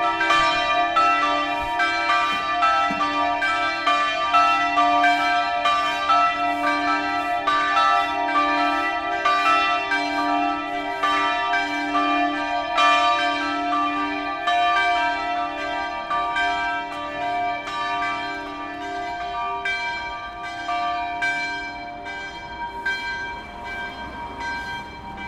Katarina-Sofia, Södermalm, Stockholm, Suecia - Bells
Campanes.
Bells.
Campanas.